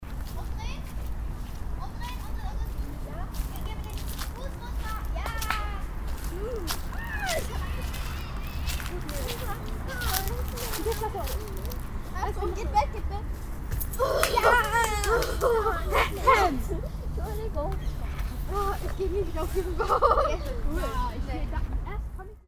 stereofeldaufnahmen im september 07 nachmittags
project: klang raum garten/ sound in public spaces - in & outdoor nearfield recordings